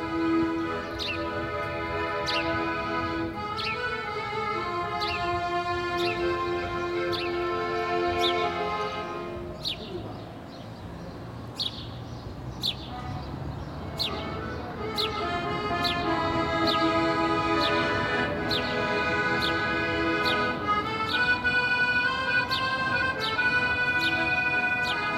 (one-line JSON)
{"title": "Reuterstrasse: Balcony Recordings of Public Actions - Midday Busker", "date": "2020-04-05 13:19:00", "description": "I heard a busker on the street, five floors down from my balcony, playing the accordion.\nOnly when he changed to the other side of the street, I could also see him.\nHe would usually play for people sitting in front of cafés and restaurants. The same tune every day, as soon as it gets warm. But now... in the empty street of Corona pandemic times, he wandered around, until someone in the house on the opposite side, from his balcony, threw some money inside a bag down to the street for him to take. Then he continued his walk.\nRecorded on Sony PCM D100", "latitude": "52.49", "longitude": "13.43", "altitude": "43", "timezone": "Europe/Berlin"}